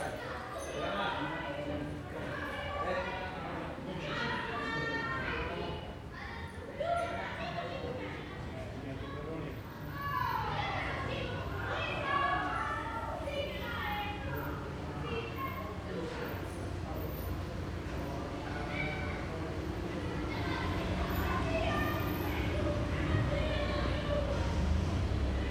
Perugia, Italien - Piazza del Duca/Via del Carmine - Children playing in the alleyways, passers-by
Piazza del Duca/Via del Carmine - Children playing in the alleyways, passers-by.
[Hi-MD-recorder Sony MZ-NH900 with external microphone Beyerdynamic MCE 82]